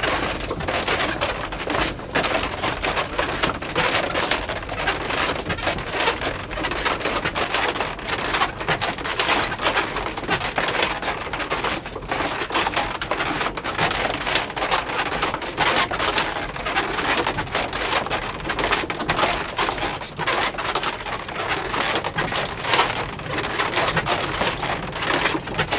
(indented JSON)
{
  "title": "Rijksstraatweg, Schalsum, Nederland - Scharstumer molen @ work - inside",
  "date": "2013-02-04 15:59:00",
  "description": "You can see the Scharstumer mill while driving on the A31 from Frjentsjer to Ljouwert (Franiker > Leeuwarden) on your right hand. When i asked, the kind miller allowed me to record the hughe wooden mechanism while he started up the mill.",
  "latitude": "53.19",
  "longitude": "5.59",
  "timezone": "Europe/Amsterdam"
}